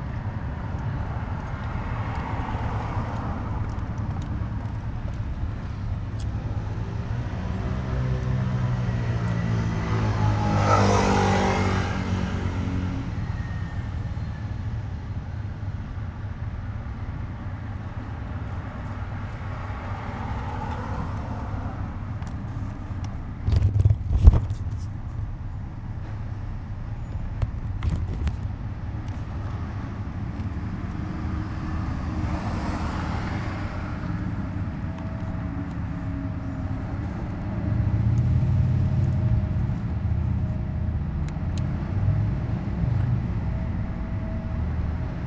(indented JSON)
{
  "title": "leipzig lindenau, odermannstraße, nahe dem npd-bureau.",
  "date": "2011-09-15 13:12:00",
  "description": "in der odermannstraße vor einem geheimnisvollen blechzaun in der nähe des npd-bureaus. autos, straßenbahnen...",
  "latitude": "51.34",
  "longitude": "12.33",
  "altitude": "113",
  "timezone": "Europe/Berlin"
}